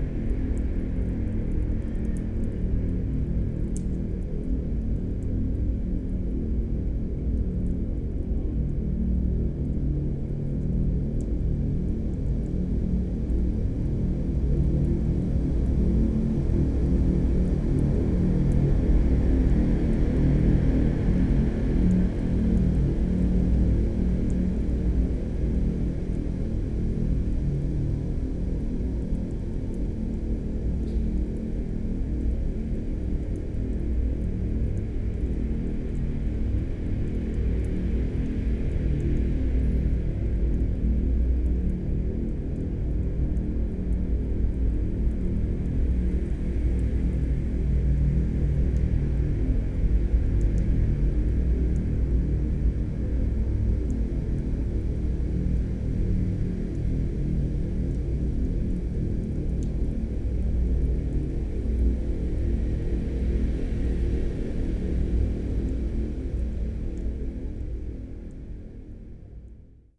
Střelecký ostrov, Prague - traffic in a rusty tube
traffic on Most Legií bridge, heard from within a rusty tube on Střelecký ostrov island. recorded during the Sounds of Europe Radio Spaces workshop.
(SD702, DPA4060)
Prague, Czech Republic, 4 October 2012, 12:15